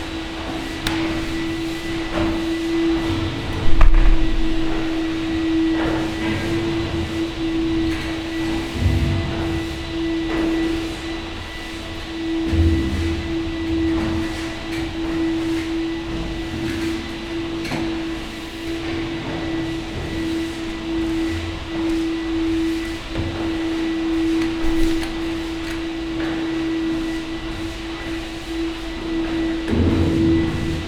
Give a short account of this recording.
monday morning, inside and outside merge, Sonopoetic paths Berlin